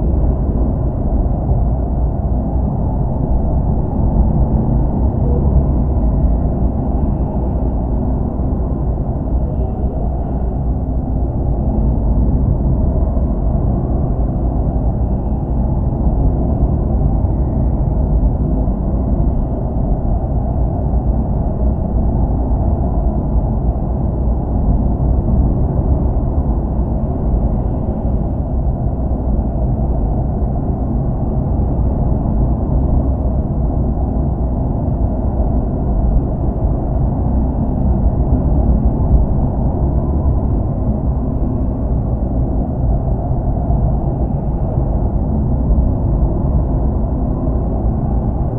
Vilnius, Lithuania, kartodrom
Inside kartodrom. My kid riding karting. LOM geophone placed on windowsill inside the kartodrom.
Vilniaus miesto savivaldybė, Vilniaus apskritis, Lietuva, 28 May, ~12:00